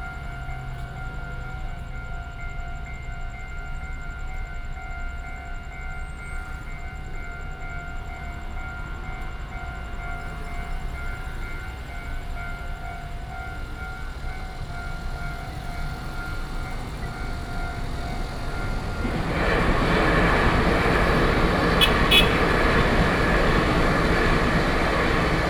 At the junction of the railway crossing, Traffic sound, The train runs through